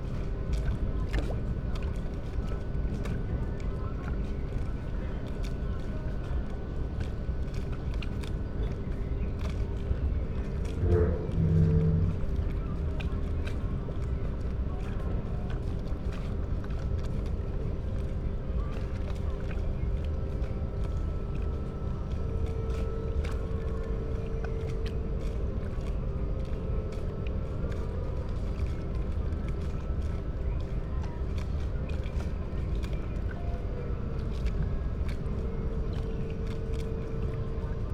Berlin, Plänterwald, Spree - Saturday evening soundscape
the concrete factory never sleeps. distant music of a techno party, distant thunder rumble
(SD702, MKH8020)